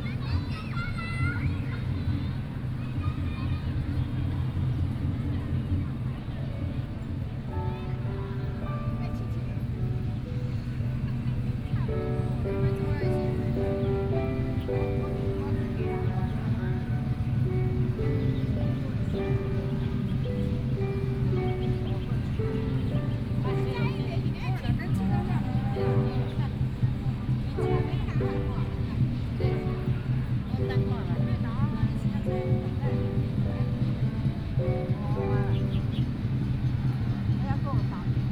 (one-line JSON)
{"title": "Qixing Park, Taipei - In the Park", "date": "2013-11-03 13:41:00", "description": "Holiday in the park community festivals, Binaural recordings, Sony PCM D50 + Soundman OKM II", "latitude": "25.14", "longitude": "121.50", "altitude": "20", "timezone": "Asia/Taipei"}